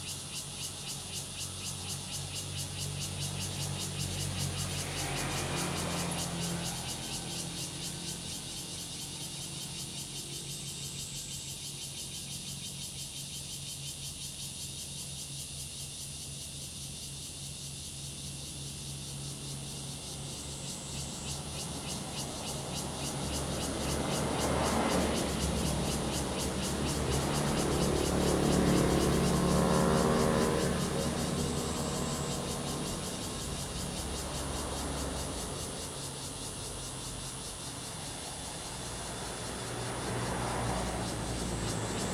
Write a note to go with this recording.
Traffic Sound, Cicadas sound, Hot weather, Zoom H2n MS+XY